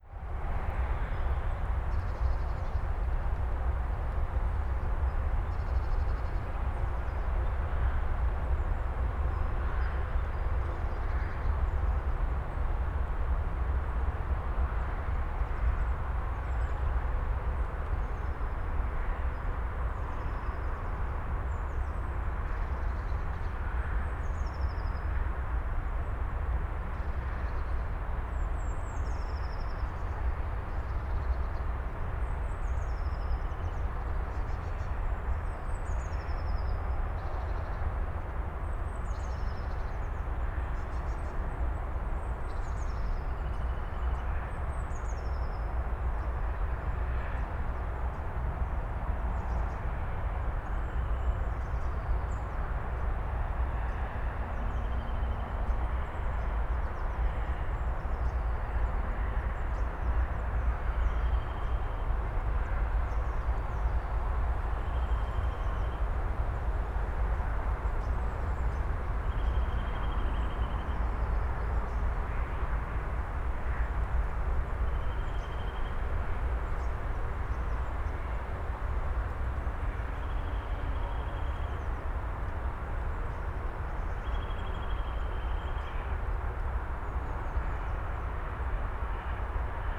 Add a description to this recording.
Berlin Karow, suburb, nature reserve Karower Teiche, forest ambience dominated by nearby Autobahn A10 drone, (Sony PCM D50, DPA4060)